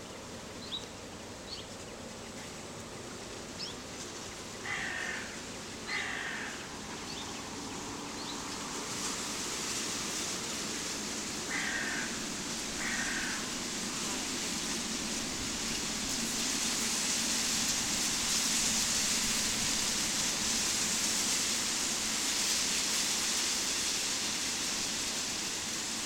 Vyžuonos, Lithuania, reeds at the lake
sitting at the lake and listening to white noises of reeds
Utenos rajono savivaldybė, Utenos apskritis, Lietuva, 8 August 2020, 17:30